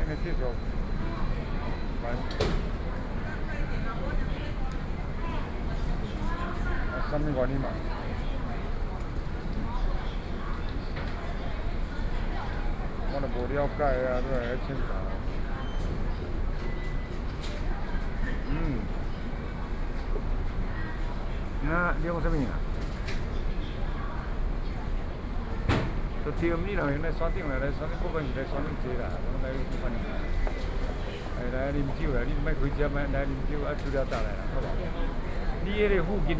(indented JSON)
{
  "title": "Taipei Main Station, Taiwan - waiting areas",
  "date": "2013-07-26 13:57:00",
  "description": "Taiwan High Speed Rail, waiting areas, Sony PCM D50 + Soundman OKM II",
  "latitude": "25.05",
  "longitude": "121.52",
  "altitude": "29",
  "timezone": "Asia/Taipei"
}